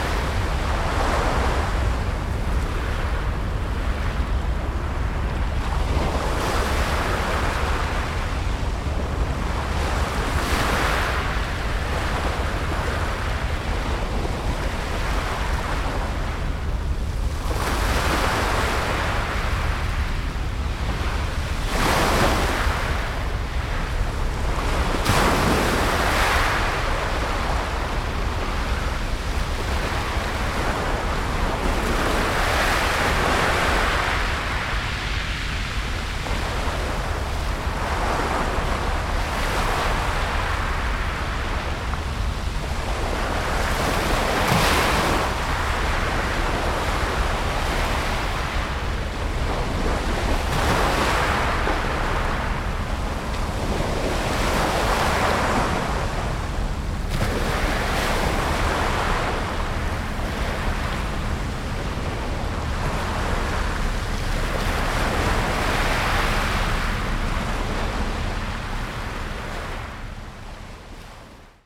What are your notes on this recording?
Surf at the cobblestone beach of Dover with the rumbling of the ferryboats' engines at the Eastern Docks in the background.